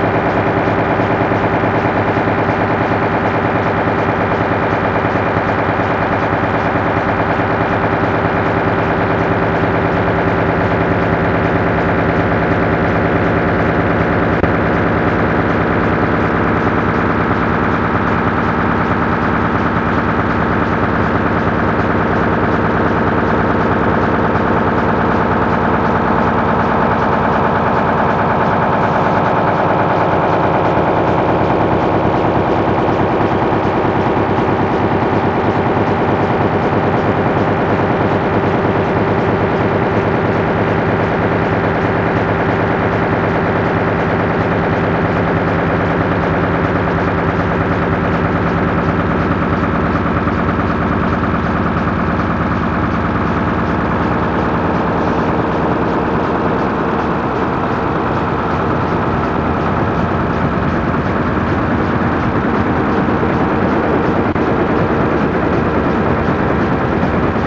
37 / Caterpilar / Toulouse - France
EREsecondERE/cortex- Mere/Dans la zone de lHippocampe_TTM2LMR-reflect_installation 2010
1 August 2010